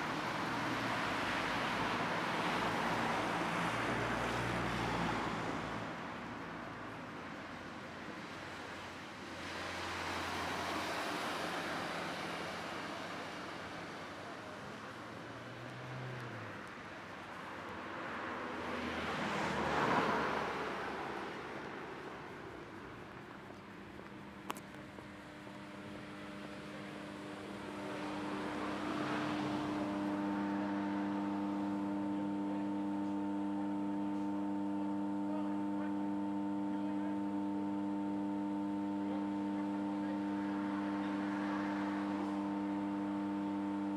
zamet, centar, rukomet
walking around new sport center